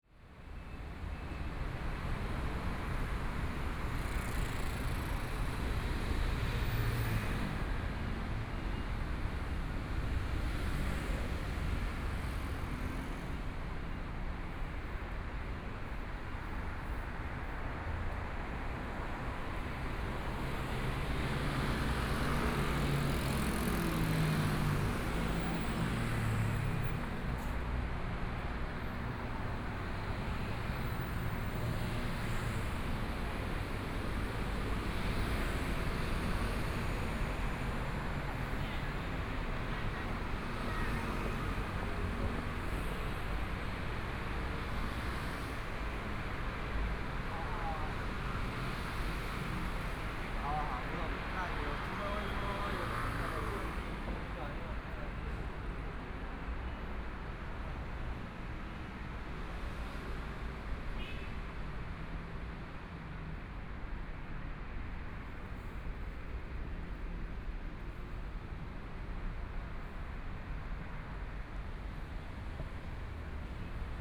Sec., Minsheng E. Rd., Zhongshan Dist. - Walking on the road
Environmental sounds, Walking on the road, Motorcycle sound, Traffic Sound, Binaural recordings, Zoom H4n+ Soundman OKM II